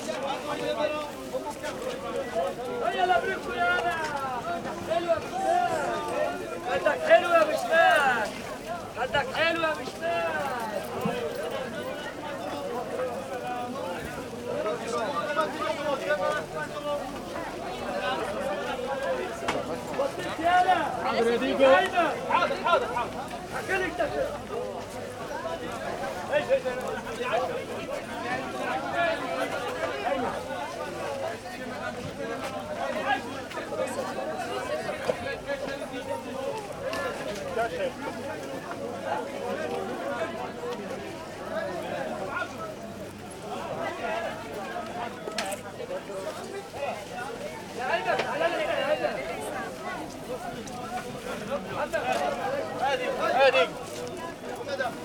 Place de la Réunion, Paris, France - Place de la Réunion 75020 Paris
Marché du dimanche matin Place de la Réunion
world listening day
18 July 2010